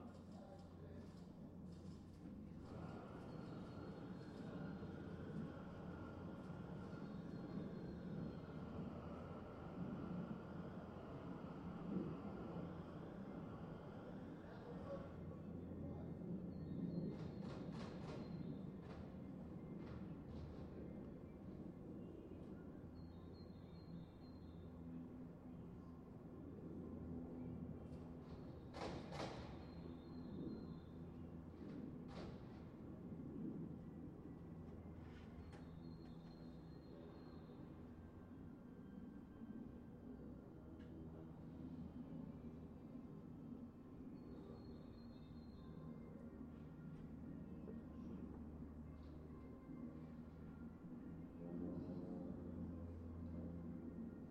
Riehl, Köln, Deutschland - City Sounds with craftsmen and airplane noises
Craftsmen working, inevtably listening to the radio while airplanes keep coming in. A normal day in the northern part of cologne.